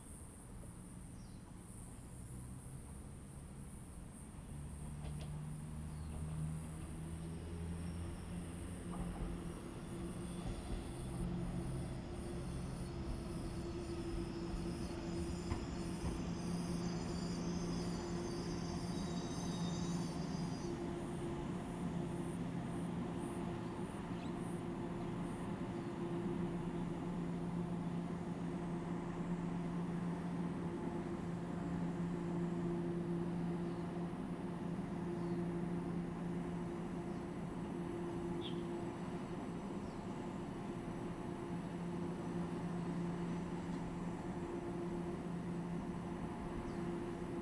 富里村, Fuli Township - Near a small station
Near a small station, Traffic Sound, Train arrival and departure, Very hot weather
Zoom H2n MS+ XY
Hualien County, Taiwan